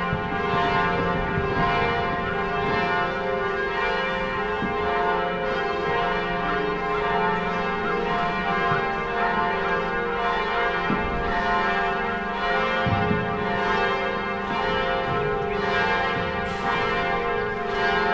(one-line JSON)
{"title": "basilica, Novigrad, Croatia - church bells inside", "date": "2013-07-14 10:50:00", "latitude": "45.32", "longitude": "13.56", "altitude": "3", "timezone": "Europe/Zagreb"}